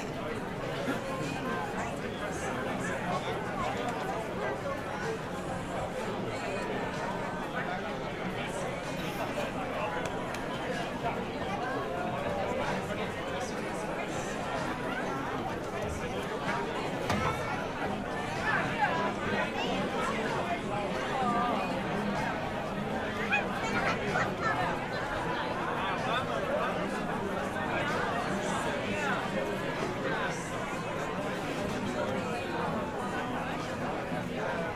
Itzehoe, Deutschland - Christmas Market 2016 Itzehoe, Germany
Christmas Market 2016 Itzehoe, Germany, Zoom H6 recorder, xy capsule